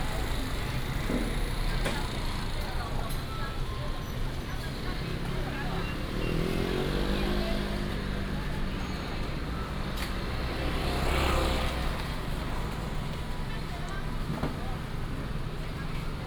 Traditional market, In the market entrance area, Traffic sound